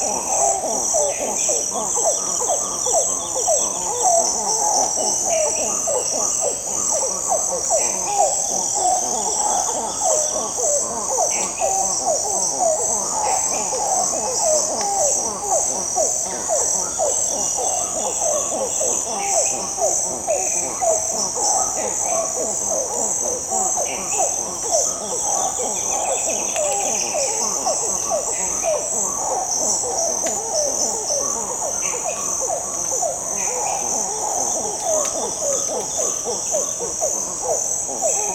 Tauary, Amazonas - Zogzog monkey yelling in the Amazonian Rainforest
In the surroundings of the small village of Tauary (close to Tefé, Amazonas) some zogzog monkey are yelling in the trees.
ORTF Setup Schoeps CCM4 x 2
Recorder Sound Devices 833
GPS: -03.655211, -64.938757
Ref: BR-200215T12